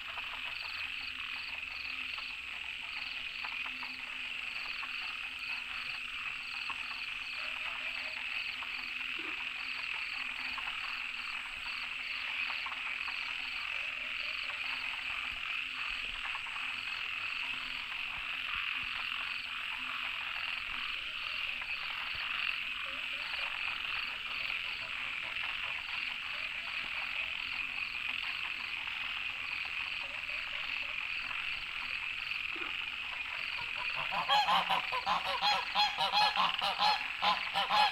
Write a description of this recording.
Frogs chirping, Ecological pool, Various frogs chirping, Goose calls